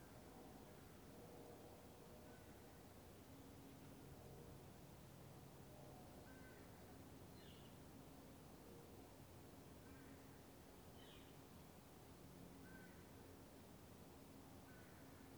Sherwood Forest - Spring
Dappled sunlight on a spring afternoon brings peaceful tranquility to the 'burbs, living here in status symbol land.
Major elements:
* Leaf blowers
* Lawn mowers
* Birds, dogs, insects
* Planes, trains & automobiles
* Distant shouts from the elementary school playground
* My dog settling down in the sun